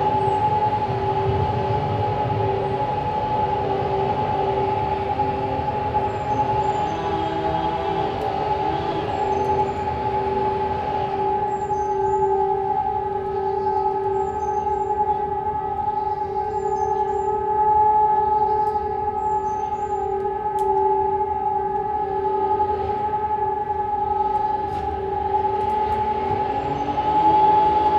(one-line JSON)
{"title": "Národní obrany, Praha, Czechia - Test the Sirens", "date": "2020-03-04 12:00:00", "description": "Every first Wednesday of the month the sound alarming sound of sirens goes off throughout the city of Prague as part of the public warning system. Set during WWII, the sirens serve as a general warning system, including for air raids.\nThis is a mono recording captured in the borough of Dejvicka, Prague.", "latitude": "50.10", "longitude": "14.40", "altitude": "229", "timezone": "Europe/Prague"}